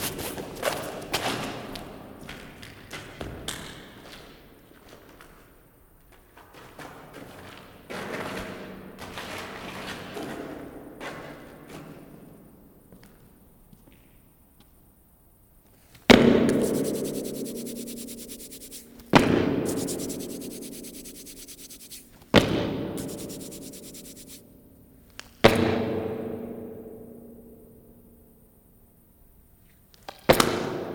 Maribor, Koroska cesta, Vinarjski potok - Jamming with location / another triggering acoustics session
No artificial processing, just playing with interesting naturally occuring echoes of a sub street passage. Part II - more stomping.